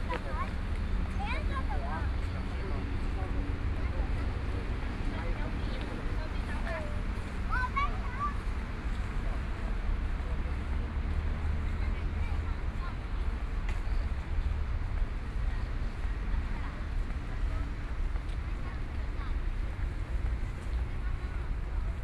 Zhongzheng East Rd., Tamsui District - walking in the street
walking along the trail next to the MRT track, Binaural recordings, Sony PCM D50 + Soundman OKM II
Danshui District, New Taipei City, Taiwan, October 2013